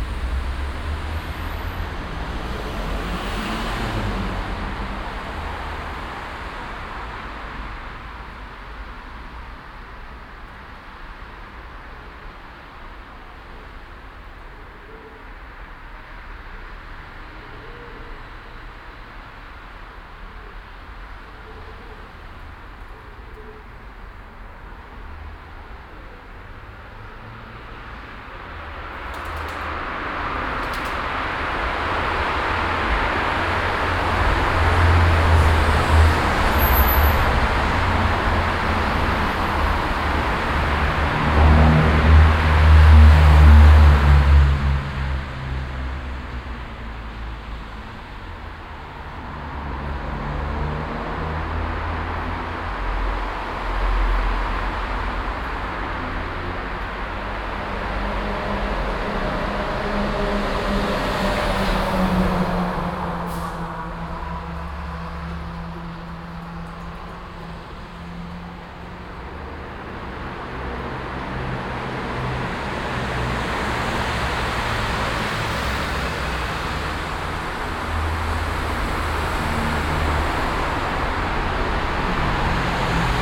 mittags in unterführung, taubengurren aus zahlreichen nestern dazu heftiger strassenverkehr
soundmap nrw - social ambiences - sound in public spaces - in & outdoor nearfield recordings
18 September 2008, 12:48